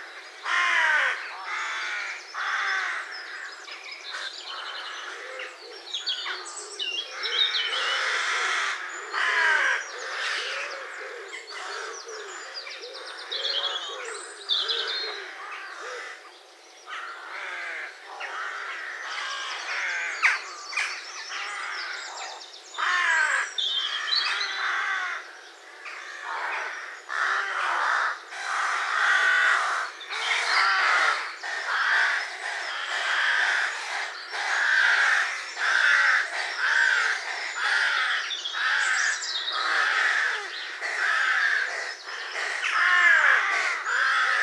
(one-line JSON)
{"title": "Mathry, UK - Mabws Bridge Rookery", "date": "2016-07-17 05:30:00", "description": "Recorded at Mabws Bridge Caravan Park using a Zoom H4 & its built in microphones. Weather conditions were good, bright, clear with just a few patches of early morning mist. Recorded around the time the Rooks were waking & before they headed off to the surrounding fields for the day.", "latitude": "51.94", "longitude": "-5.09", "altitude": "76", "timezone": "Europe/London"}